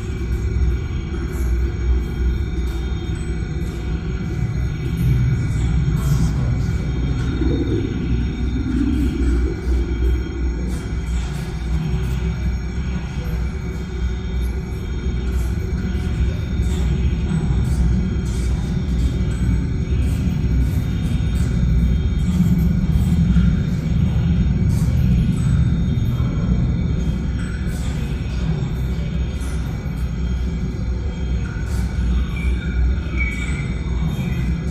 program: sound constructions - Kim Cascone @ program (excerpt)
Berlin, Deutschland